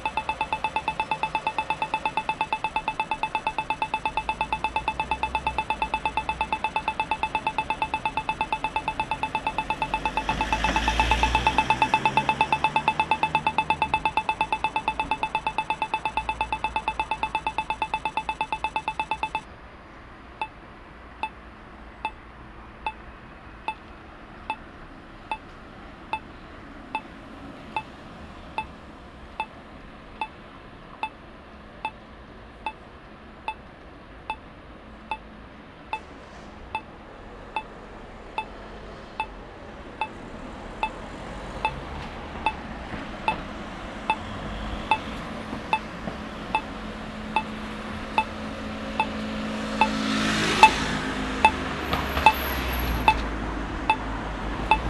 Aalst, België - Red light
A red light regulates the traffic for blind people.